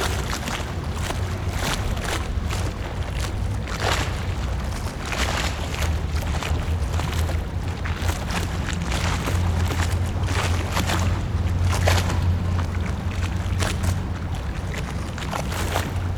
wugu, New Taipei City - The sound of the waves
新北市 (New Taipei City), 中華民國, 11 January 2012, 13:04